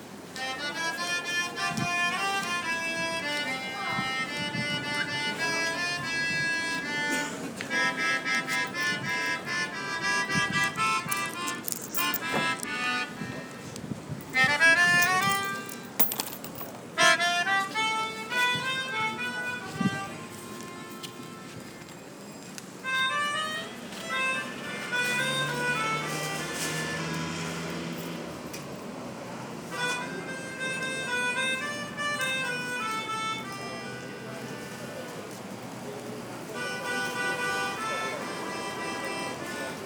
liptovsky mikulas - hypernovaharmonica
hypernova, harmonica, liptovsky mikulas, supermarket, parking
27 August 2010, ~11:00